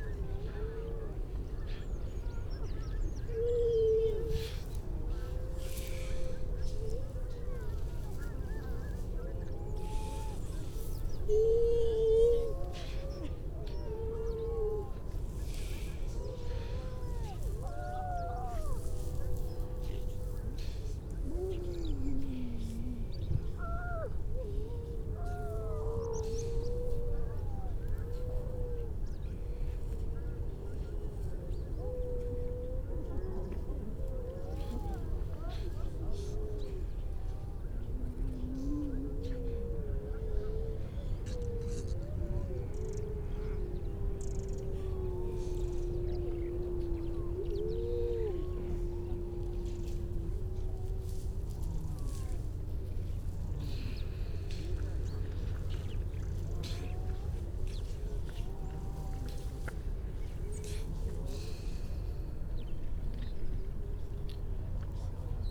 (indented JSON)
{
  "title": "Unnamed Road, Louth, UK - grey seals soundscape ...",
  "date": "2019-12-03 10:14:00",
  "description": "grey seals soundscape ... generally females and pups ... parabolic ... bird calls ... skylark ... crow ... redshank ... pied wagtail ... linnet ... starling ... pink-footed geese ... all sorts of background noise ...",
  "latitude": "53.48",
  "longitude": "0.15",
  "altitude": "1",
  "timezone": "Europe/London"
}